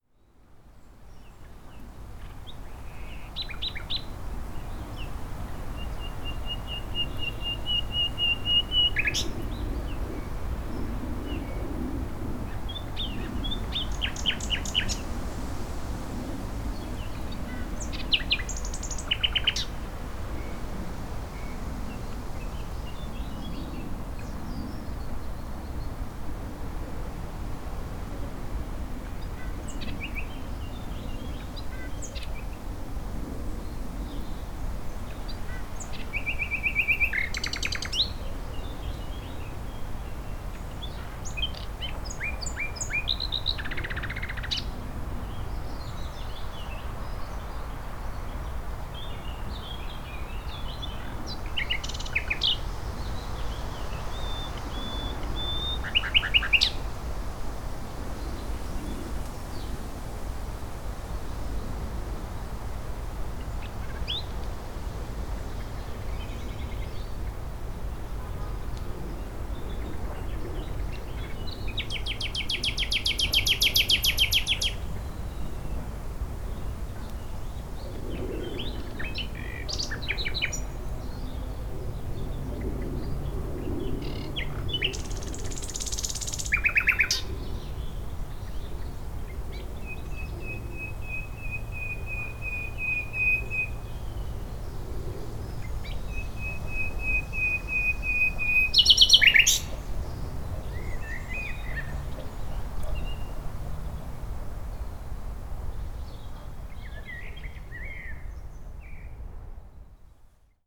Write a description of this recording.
Nightingale singing in undergrowth near Brightling, East Sussex. Recorded on Tascam DR-05 internal microphones with wind muff.